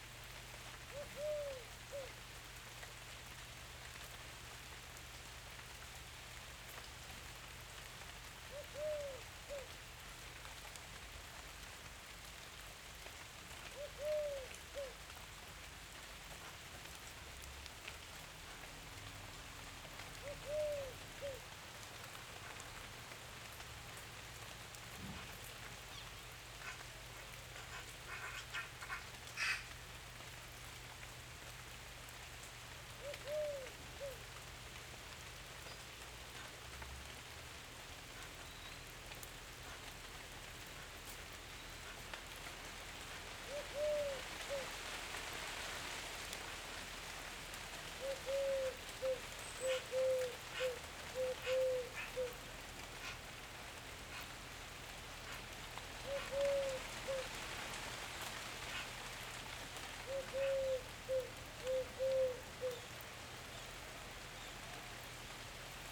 Wood Piegon and rain at the Esseres
Binaural recording with Zoom H6
Lavacquerie, France, 20 August 2015